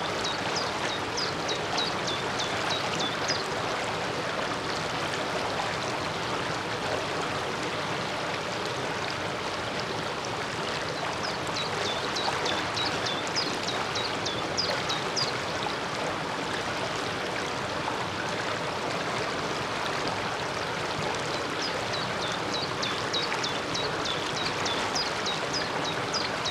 Lithuania, sitting on the tube
theres a tube under the road